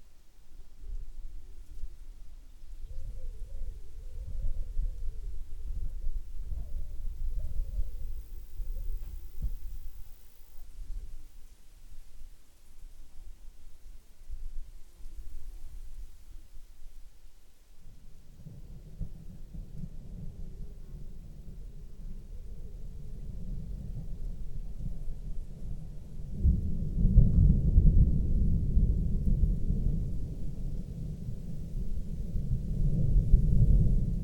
emajõe-suursoo, tartumaa, estonia - thunder and cranes
cranes passing over the bog as a thunderstorm approaches at a small cabin in southeast estonia